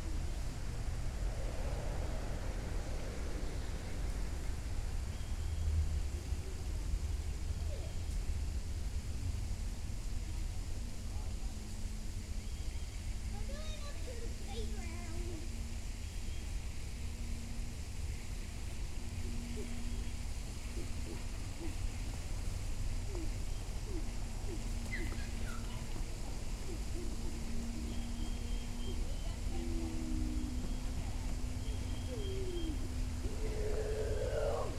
{"title": "Nickajack Rd SE, Mableton, GA - Thompson Park Community Center", "date": "2021-02-07 17:31:00", "description": "A small park with a pond, playground, and picnic tables. Children were playing and a family fed the ducks while the recording took place. A train came by a couple minutes in. You can hear cars, water from the pond to the left, and sparse birdsong.\n[Tascam DR-100mkiii & Primo EM-272 omni mics]", "latitude": "33.84", "longitude": "-84.54", "altitude": "282", "timezone": "America/New_York"}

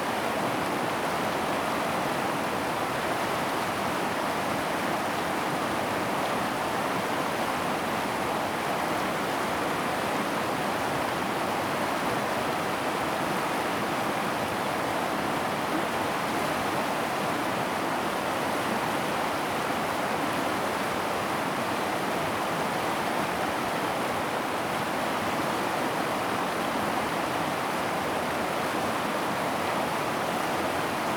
{"title": "太麻里溪, Jialan, Jinfeng Township 台東縣 - Stream sound", "date": "2018-04-03 16:02:00", "description": "In the river, Stream sound\nZoom H2n MS+XY", "latitude": "22.59", "longitude": "120.96", "altitude": "78", "timezone": "Asia/Taipei"}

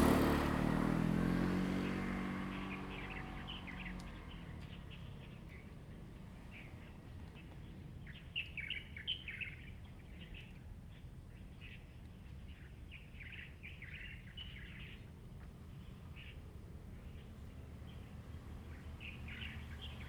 {"title": "Minzu Rd., Hsiao Liouciou Island - Birds singing", "date": "2014-11-02 07:11:00", "description": "Birds singing, Traffic Sound\nZoom H2n MS +XY", "latitude": "22.35", "longitude": "120.38", "altitude": "55", "timezone": "Asia/Taipei"}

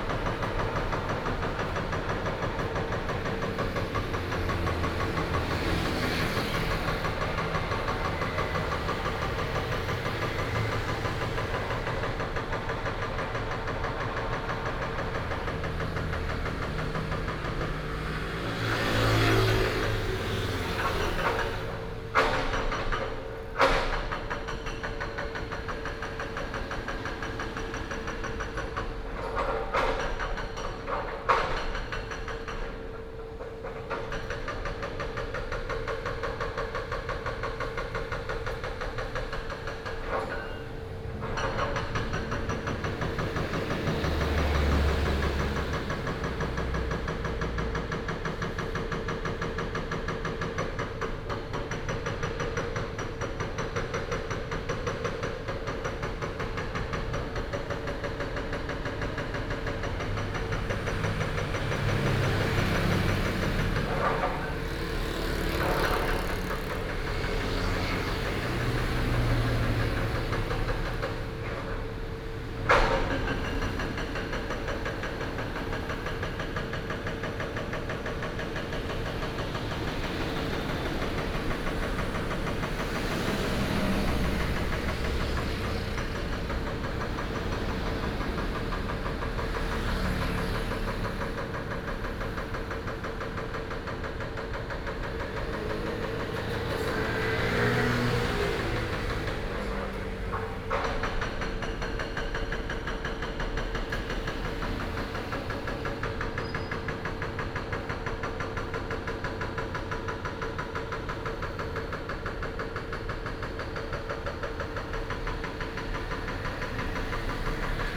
{"title": "Dazhuang Rd., Xiangshan Dist., Hsinchu City - Removal of factory buildings", "date": "2017-09-15 13:47:00", "description": "Removal of factory buildings, traffic sound, Binaural recordings, Sony PCM D100+ Soundman OKM II", "latitude": "24.79", "longitude": "120.93", "altitude": "15", "timezone": "Asia/Taipei"}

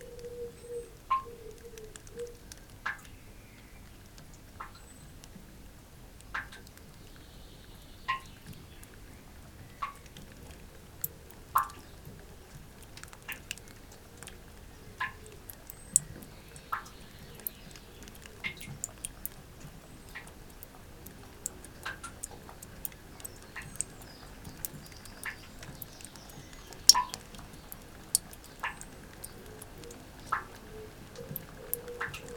{"title": "Luttons, UK - down the drainpipe ...", "date": "2017-06-06 05:30:00", "description": "down the drainpipe ... droplets recorded at the bottom of a downpipe ... single lavalier mic blu tacked to a biro placed across the grating ... background noise ... bird calls from collared dove ... blackbird ... house sparrow ... wren ...", "latitude": "54.12", "longitude": "-0.54", "altitude": "78", "timezone": "Europe/London"}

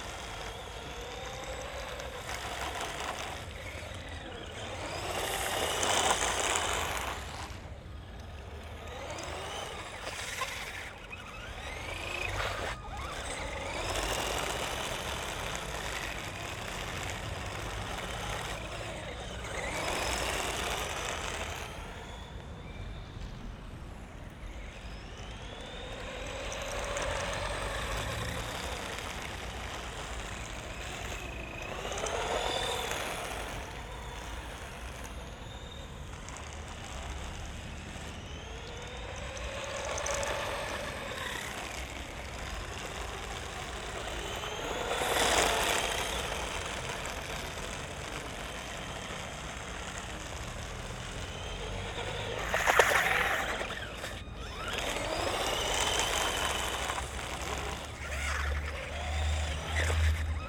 {"title": "Maribor, Pristaniska ulica - model car racing", "date": "2012-08-01 19:05:00", "description": "an older man is demonstrating his remote controlled model car on a platform below the market area. he comes here daily, with cars, helicopters and UFOs.", "latitude": "46.56", "longitude": "15.64", "altitude": "264", "timezone": "Europe/Ljubljana"}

